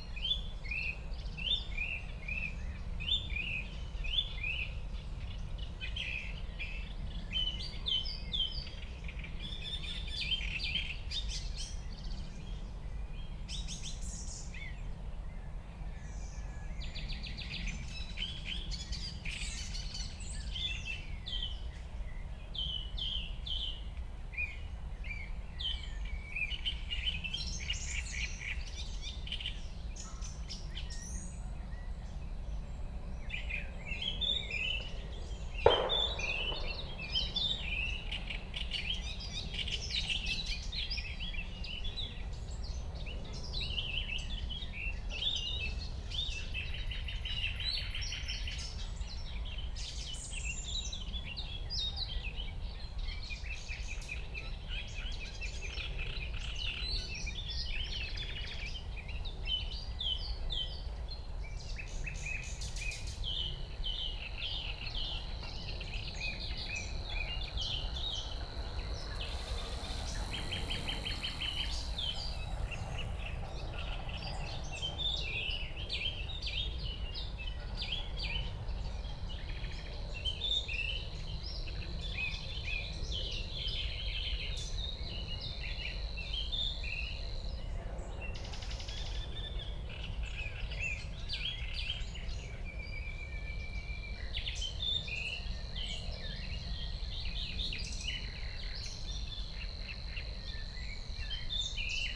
Moorlinse, Buch, Berlin - bird chorus, evening anbience
place revisited on a spring evening, elaborated soundscpae mainly because of the birds: Song thrush, Great reed warbler, Savi's warbler (german: Singdrossel, Drosselrohsänger, Rohrschwirl) and others, low impact of the nearby Autobahn
(Sony PCM D50, DPA4060)